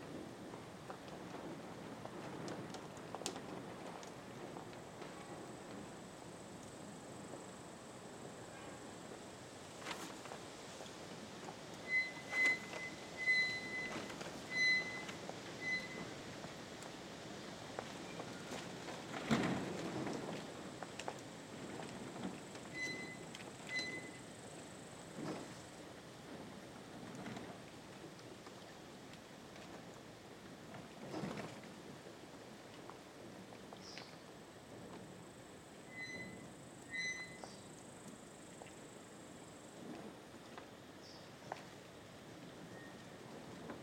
Grand wind play inside of old farm building